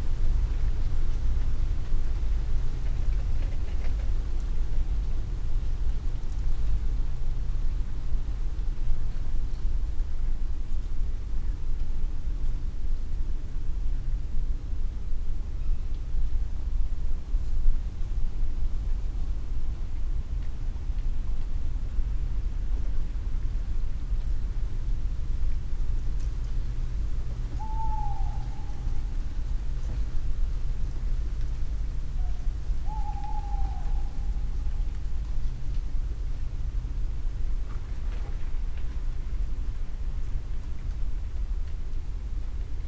Pergola, Malvern, UK - Owl Apple Ducks
Early morning after a breezy night owls call, apples fall, ducks arrive and leave and I come to recover the recorder.
West Midlands, England, United Kingdom, 10 October 2021